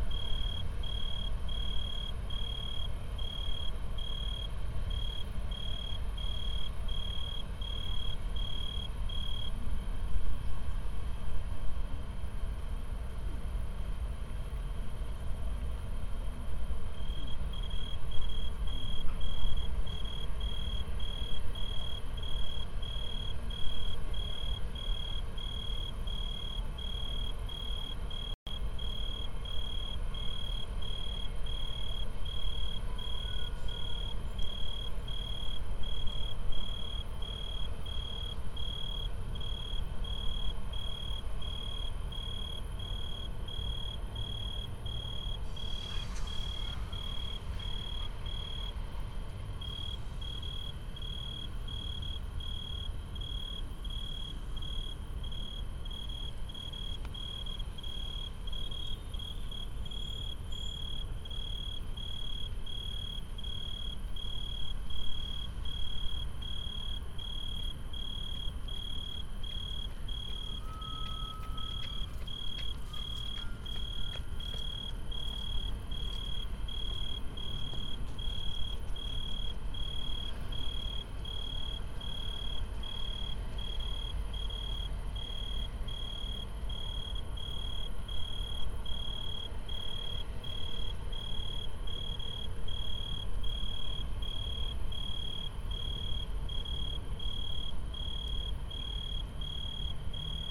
Mediapark, Köln - trains and tree crickets
(Tascam iXJ2 / iphone / Primo EM172)
Köln, Germany, 30 July 2019